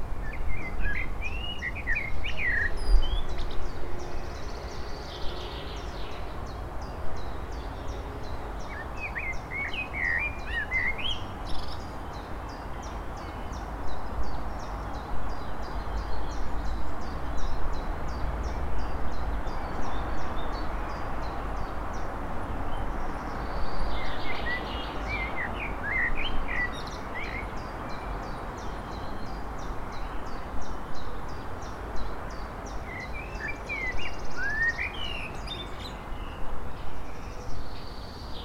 Głowackiego, Gorzów Wielkopolski, Polska - Old cementary.
Looking for ghosts on the old cementary.